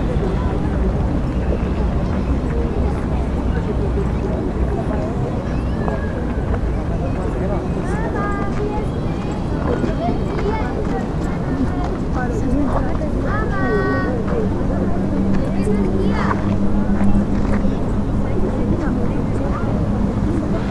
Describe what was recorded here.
geodetic reference point during the thai market where the thai community sells thai food on saturday and sunday afternoons, the city, the country & me: august 18, 2013